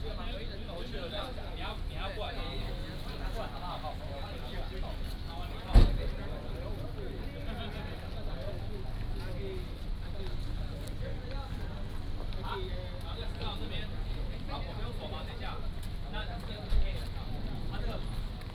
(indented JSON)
{
  "title": "南寮漁港, Lüdao Township - Visitors Pier",
  "date": "2014-10-31 13:59:00",
  "description": "Visitors Pier\nBinaural recordings\nSony PCM D100+ Soundman OKM II",
  "latitude": "22.66",
  "longitude": "121.47",
  "altitude": "7",
  "timezone": "Asia/Taipei"
}